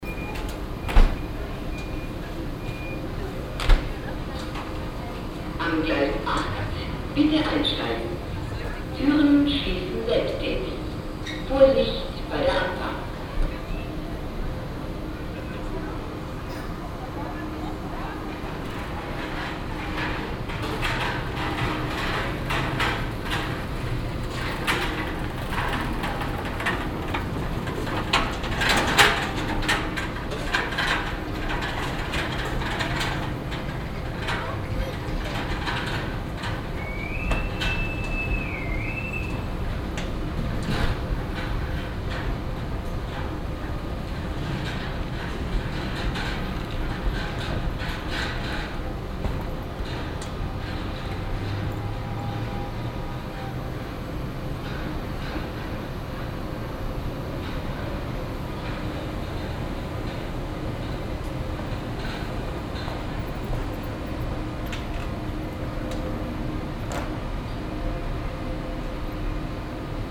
betrieb und ansage auf gleis 8, am frühen abend
soundmap nrw: topographic field recordings & social ambiences